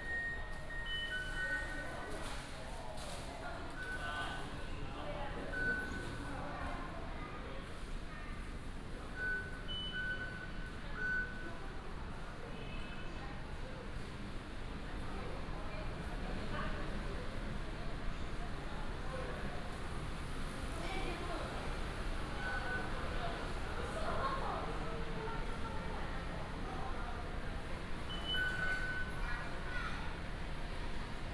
In Hall MRT station
Sony PCM D50+ Soundman OKM II
Zhonghe District, New Taipei City, Taiwan, May 2012